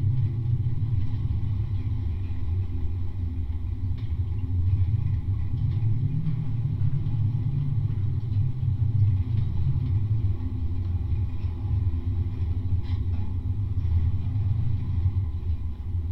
Vabalai, Lithuania, fence at pump station
contact microphones on a fence at pump station